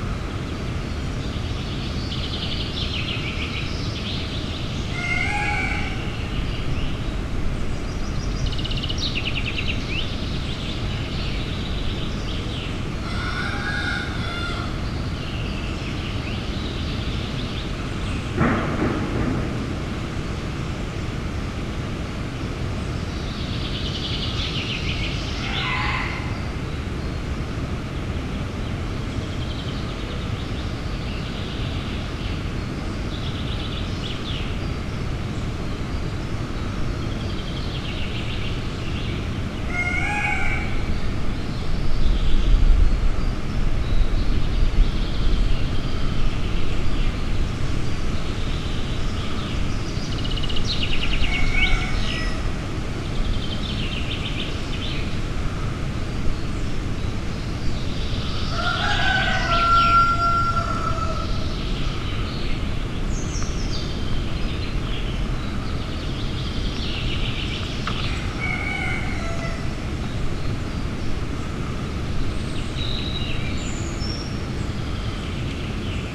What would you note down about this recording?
Schloss, Ostrau, park, rooster, poultry farm, fertilizer, rural, Background Listening Post